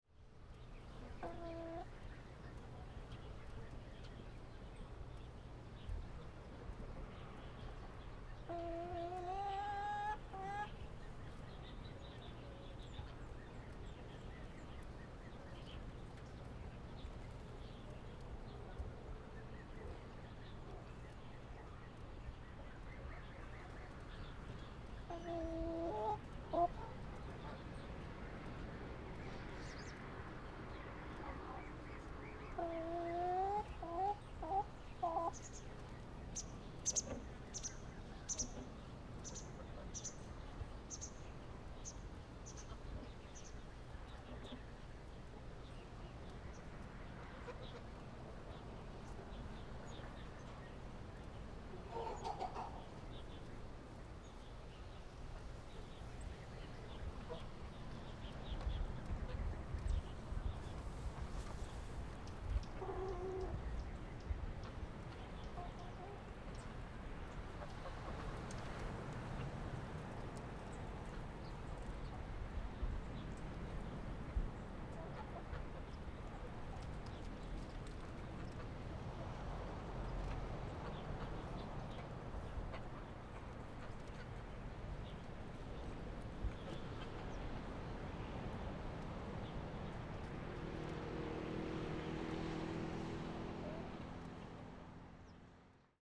坂里村, Beigan Township - Chicken sounds
Chicken sounds
Zoom H6+Rode NT4
馬祖列島 (Lienchiang), 福建省, Mainland - Taiwan Border, 15 October 2014